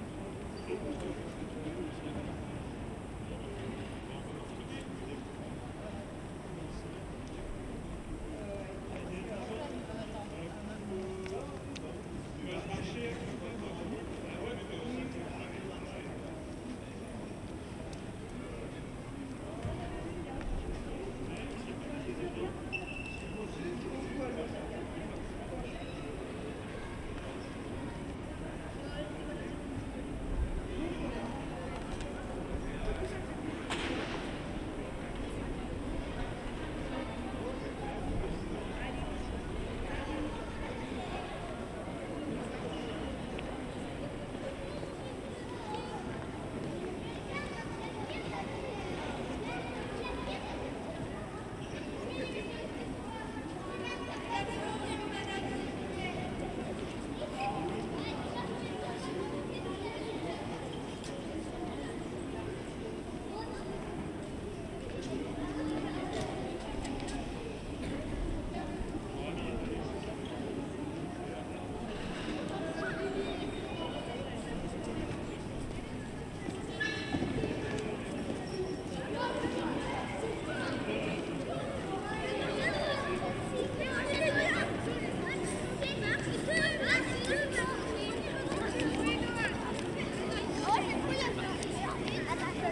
Place des Héros, Arras, France - Atmosphere on Heroes Square, Arras
People and chimes in Arras, Heroes Square, Binaural, Zoom H3VR